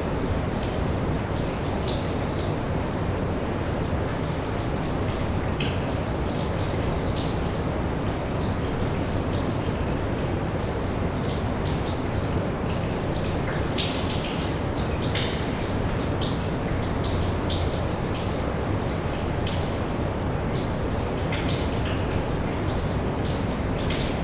Valenciennes, France - Sewers, underground waterfall
Into the Valenciennes sewers, distant recording of an underground waterfall. Just near the waterfall, you can't hear you screaming as it's very noisy !